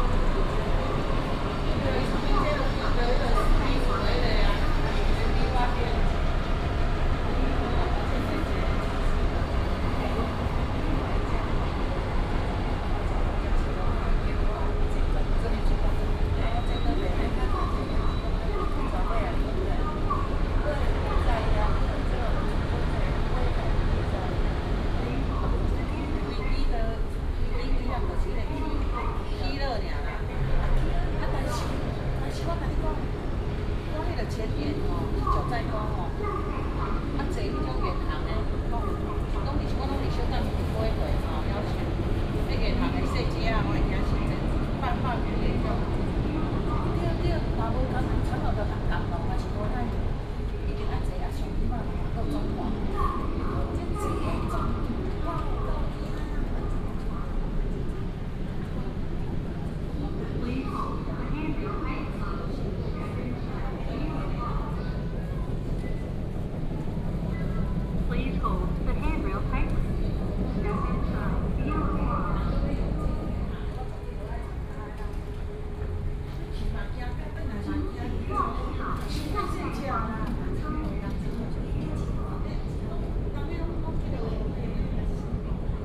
高雄車站, Sanmin Dist., Kaohsiung City - Walk into the MRT station
Walk into the MRT station, Construction sound
Kaohsiung City, Taiwan, March 2018